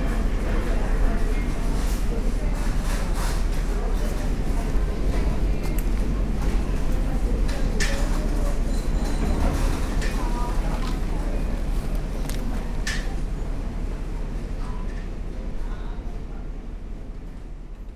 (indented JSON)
{
  "title": "Outside the Supermarket, Great Malvern, Worcestershire, UK - Supermarket",
  "date": "2019-11-09 10:42:00",
  "description": "A typical day outside the supermarket. Shopping trollies, people, sliding doors of the supermarket, distant sounds of the large car park.\nMixPre 6 II with 2 x Sennheiser MKH 8020s",
  "latitude": "52.11",
  "longitude": "-2.33",
  "altitude": "135",
  "timezone": "Europe/London"
}